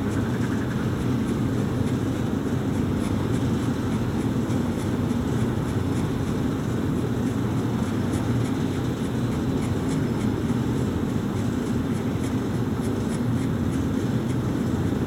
Remix Cement, Thames and Medway Canal, Gravesend, UK - Cement Works at Night
Remix Cement works ticking over, accompanied by some frogs and other wildlife, and a couple of trains.
South East England, England, United Kingdom